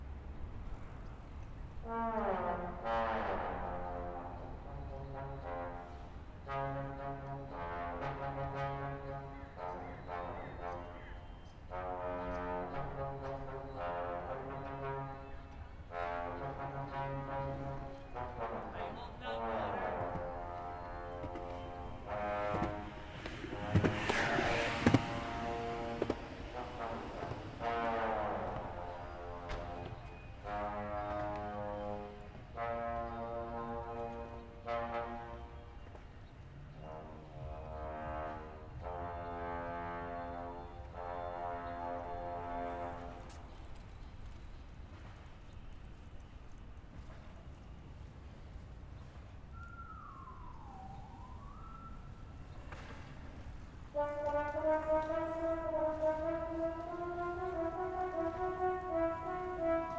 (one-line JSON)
{"title": "UCSB 22 Parking Lot, Santa Barbara, CA 93117美国 - Instrument Playing In Front Of School Parking Lot by Peixuan Liu", "date": "2019-10-18 15:20:00", "description": "Every time when I walk pass the passing lot, there are instruments playing. This time is the horn sound, with the bicycle, skateboards, people talking, and gull sound.", "latitude": "34.41", "longitude": "-119.85", "altitude": "17", "timezone": "America/Los_Angeles"}